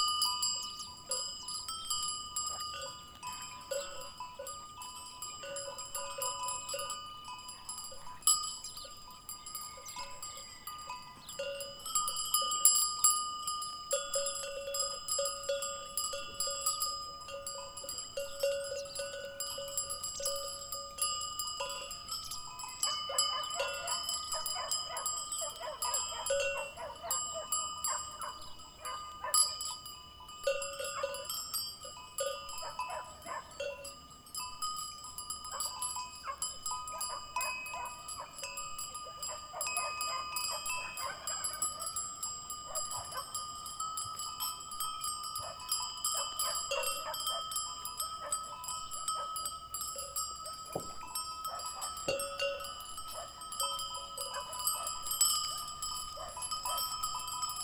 Montargil, Ponte de Sor Municipality, Portugal - goat bells
Goats on a field, bells ringing, Foros dos Mocho, Montargil, stereo, church-audio binaurals clipped on fence, zoom h4n
14 February 2012, 08:39